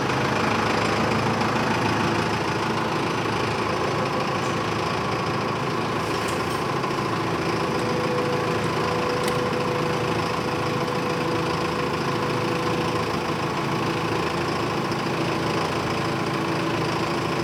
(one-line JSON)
{"title": "Havana, Cuba - Vedado early morning soundscape", "date": "2009-03-18 03:30:00", "description": "Early morning in the Vedado neighbourhood, with roosters and truck.", "latitude": "23.14", "longitude": "-82.40", "altitude": "7", "timezone": "America/Havana"}